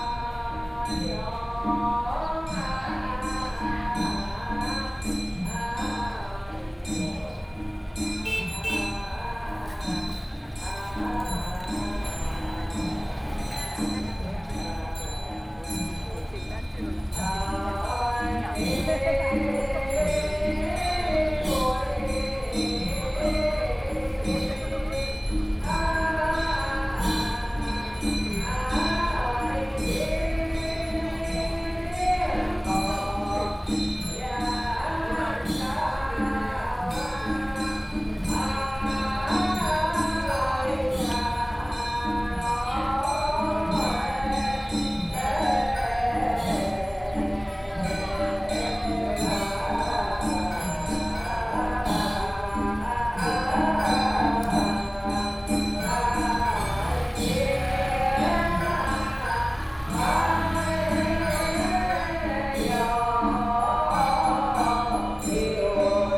中山區集英里, Taipei City - Sitting intersection
Sitting intersection, Temple chanting voices, Traffic Sound
Sony PCM D50+ Soundman OKM II
Taipei City, Taiwan